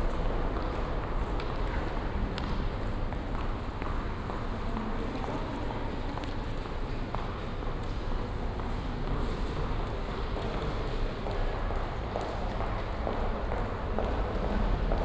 Maribor, Slovenia, main station hall ambience and short walk out, binaural.
16 November 2011, 15:50